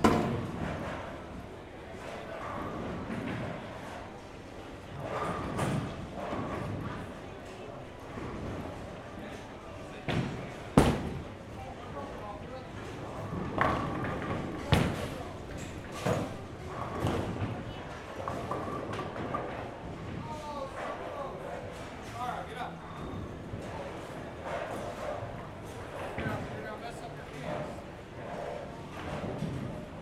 At the bowling alley with the recorder on the table behind the lane while playing a full game.
Ohio, United States, 2 February 2022